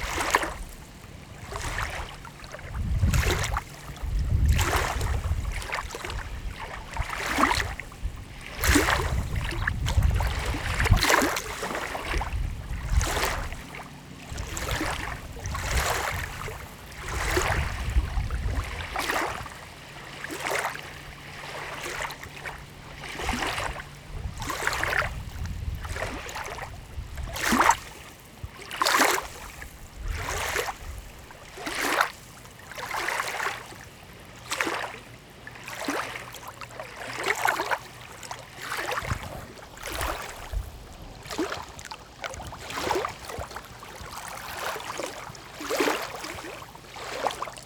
berlin wall route, wannsee, 30/08/09
Germany